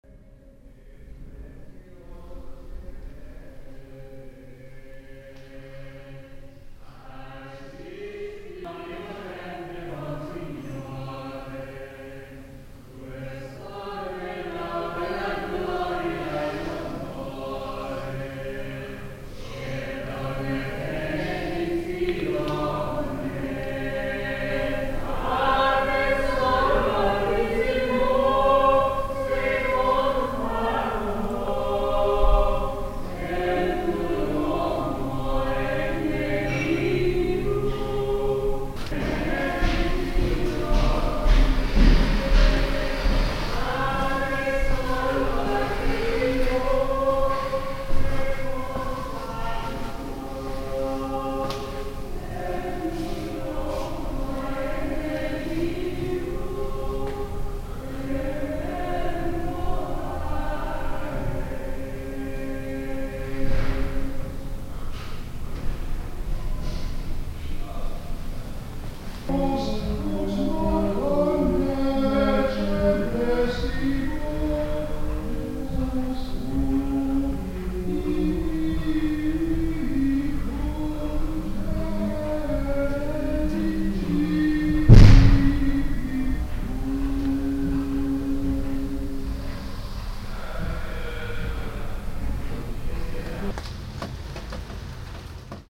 small group choir chanting a morning ritual in a chapel of the catholic cloister
international soundmap : social ambiences/ listen to the people in & outdoor topographic field recordings
22 June 2009, 12:52